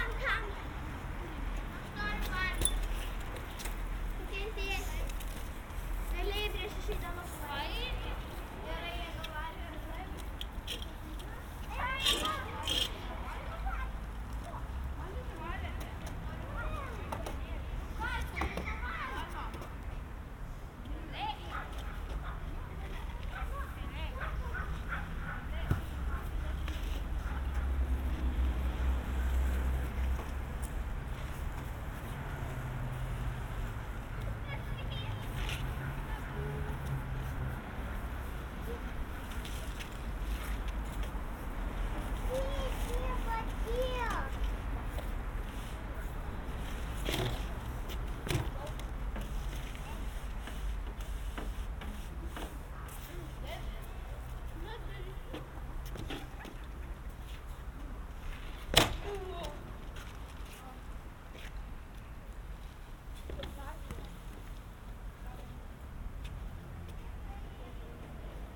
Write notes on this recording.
At kids playground. Recorded with sennheiser ambeo headset